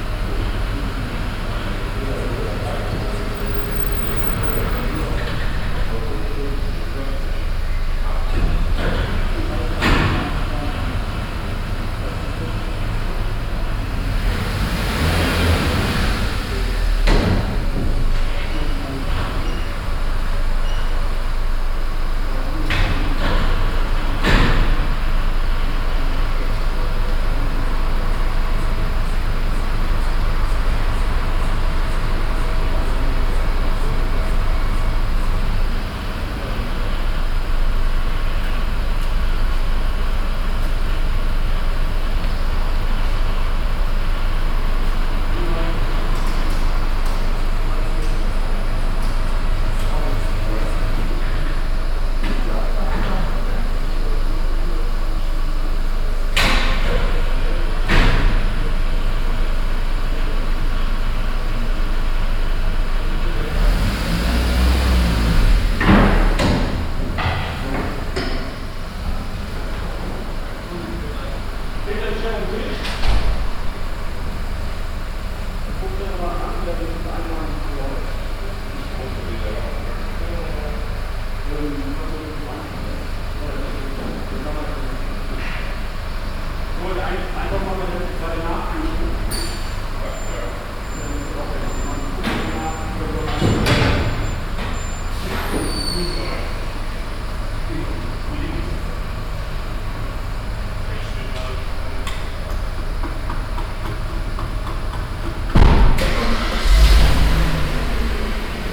{
  "title": "Heidkamp, Bergisch Gladbach, Deutschland - TÜV Rheinland - car security check",
  "date": "2013-07-01 09:30:00",
  "description": "Inside the building of the local TÜV station. The sound of cars driving inside the open building and the sounds of different security check mechanics. In the background conversation voices of the stuff.\nsoundmap nrw - social ambiences and topographic field recordings",
  "latitude": "50.98",
  "longitude": "7.14",
  "altitude": "106",
  "timezone": "Europe/Berlin"
}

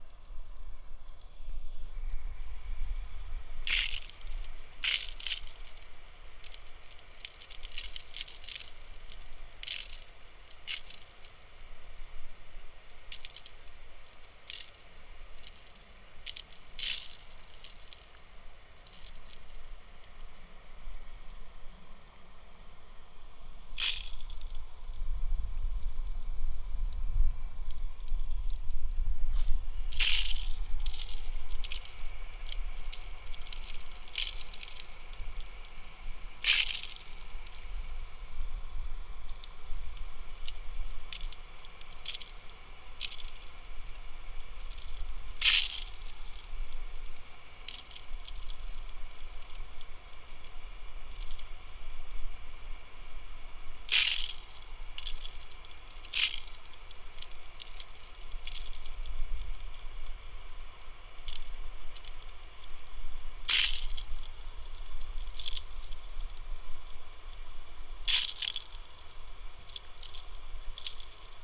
{
  "title": "Suffolk Coastal Path, Covehithe, UK - irrigation water hose",
  "date": "2022-05-09 14:48:00",
  "description": "field irrigation water wasted through heavily leaking hose connections.",
  "latitude": "52.37",
  "longitude": "1.70",
  "altitude": "8",
  "timezone": "Europe/London"
}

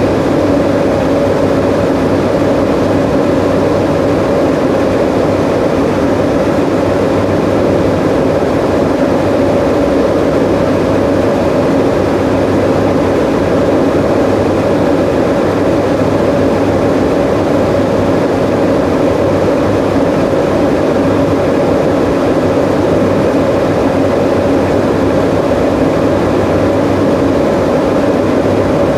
{
  "title": "stolzembourg, SEO, hydroelectric powerplant, generator",
  "date": "2011-09-18 14:55:00",
  "description": "Inside the under earth tunnel of the SEO hydroelectric powerplant named: Kaverne. The sound of a generator driven by the water power generated from the turbine that stands next to it.\nStolzemburg, SEO, Wasserkraftwerk, Generator\nIm unterirdischen Tunnel des SEO-Kraftwerks mit dem Namen: Kaverne. Das Geräusch von einem Generator, der durch die Wasserkraft angetrieben ist, die durch die Turbine neben ihm erzeugt wird.\nStolzembourg, SEO, usine hydroélectrique, générateur\nDans le tunnel souterrain de l’usine hydroélectrique SEO qui s’appelle : la caverne. Le bruit d’un générateur entraîné par la puissance de l’eau, produit par la turbine qui est placée juste à côté.",
  "latitude": "49.95",
  "longitude": "6.18",
  "altitude": "295",
  "timezone": "Europe/Luxembourg"
}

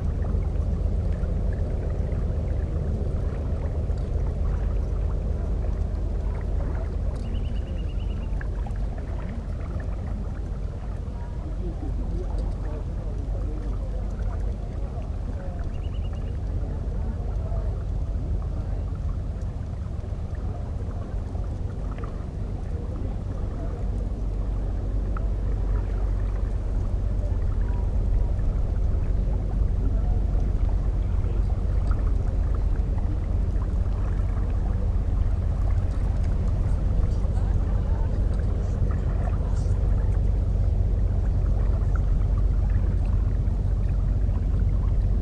{
  "title": "Nathan-Kahn-Straße, Köln, Germany - Rhein recording",
  "date": "2020-03-22 16:30:00",
  "description": "Recording by the river, a barge passing by, wind through grass, and people walking along.\n(Recorded with Zoom H5 and Soundman OKM I solo)",
  "latitude": "50.98",
  "longitude": "6.99",
  "altitude": "37",
  "timezone": "Europe/Berlin"
}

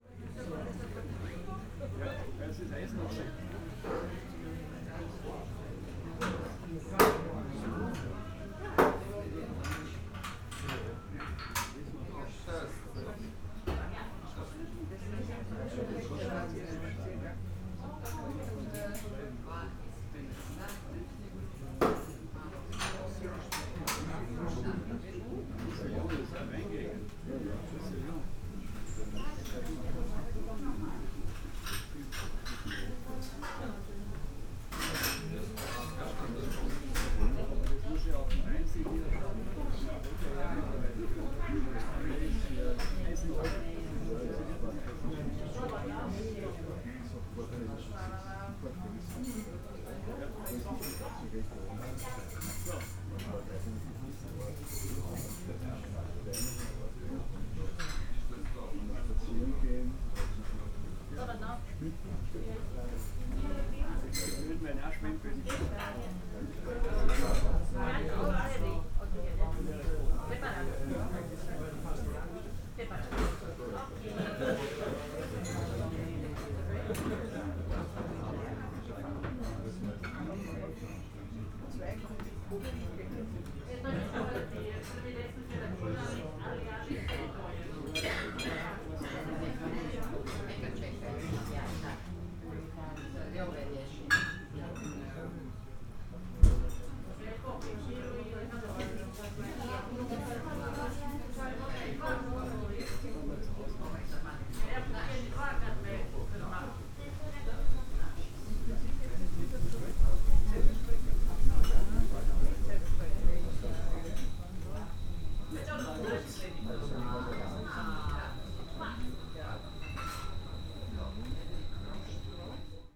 {
  "title": "Hum, Hum, Chorwacja - restaurant entrance",
  "date": "2021-09-07 15:00:00",
  "description": "at the restaurant entrance in the city of hum. conversations of guests and sounds from the kitchen. (roland r-07)",
  "latitude": "45.35",
  "longitude": "14.05",
  "altitude": "340",
  "timezone": "Europe/Zagreb"
}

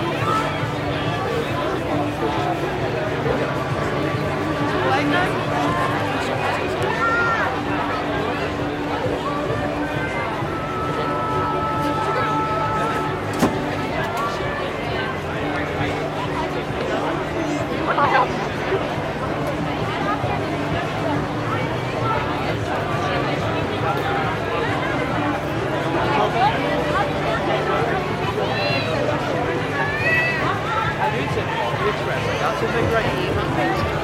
{
  "title": "Belfast, UK - Belfast Christmas Market Inside- Pre-Restriction",
  "date": "2021-12-10 17:08:00",
  "description": "After two years without any Christmas Markets, the city of Belfast decided to organise it again. This is a double stitched recording from the right and left inside of the market. Recording of two market recording positions, multiple music genres, merchant stands, chatter, passer-by, doors opening/closing, close/distant dialogue, fire burning, children, strollers, laughter, and objects slammed and banged.",
  "latitude": "54.60",
  "longitude": "-5.93",
  "altitude": "12",
  "timezone": "Europe/London"
}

